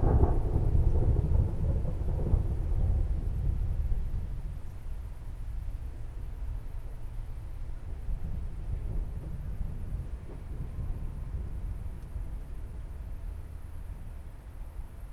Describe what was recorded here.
summer evening. thunderstorm rumbling far in the distance. no lightning. just distant murmur. moderate cricket activity. (roland r-07)